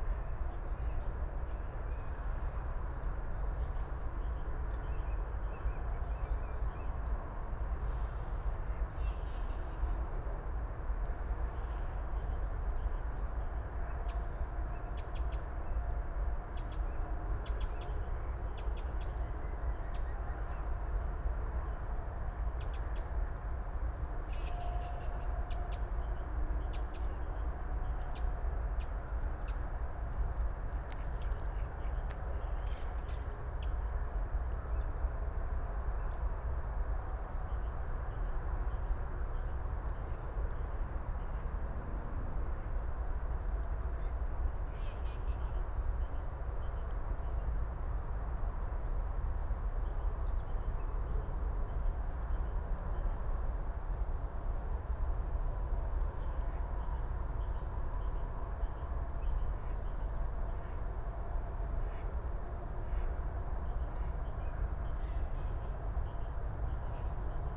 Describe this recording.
Berlin Buch, morning ambience at nature reserve Moorlinse, S-Bahn trains passing by, (Sony PCM D50, DPA4060)